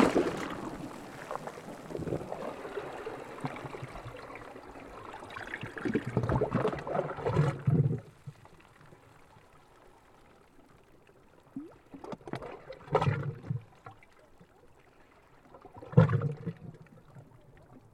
Coz-pors, Trégastel, France - Burping Water in a crack of a rock [Coz-pors]
L'eau s'écoule dans une petite fissure le long du rocher.
The water flows in a small crack along the rock.
April 2019.
/Zoom h5 internal xy mic
1 April